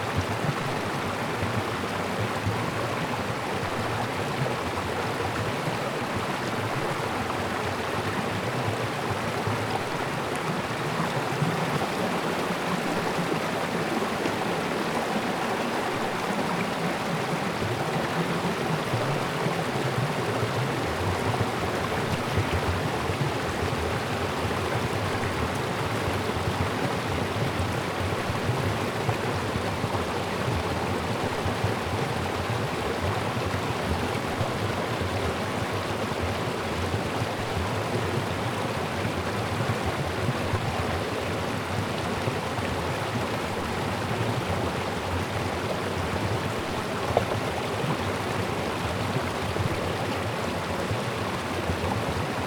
neoscenes: changing the course of nature